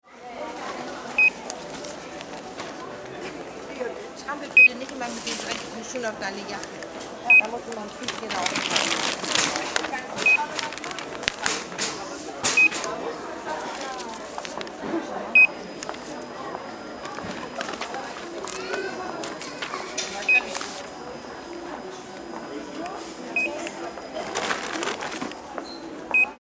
FRAPPANT vs. IKEA - Furniture Store- Hamburg Moorfleet, Furniture, Scanner
Official plans of future urban development in Hamburg aim to restructure the Große Bergstrasse in Hamburg-Altona. One aspect of the plan is the construction of a large inner city store by the IKEA corporation on the site of the former department store "Frappant", actually used as studios and music venues by artists.
You find the sounds of the Ikea furniture store layered on the map of the Frappant building, next to sounds of the existing space.
Offizielle Umstrukturierungspläne in Hamburg sehen vor das ehemalige Kaufhaus „Frappant“ in der Altonaer Großen Bergstrasse – seit 2006 Ateliers und Veranstaltungsräume – abzureißen und den Bau eines innerstädtischen IKEA Möbelhaus zu fördern. Es gibt eine öffentliche Debatte um diese ökonomisierende und gentrifizierende Stadtpolitik.
Auf dieser Seite liegen die Sounds von IKEA Moorfleet auf der Karte der Gr. Bergstrasse neben Sounds im und um das Frappant Gebäude. Eine Überlagerung von Klangräumen.
Hamburg, Germany, 1 November 2009, ~16:00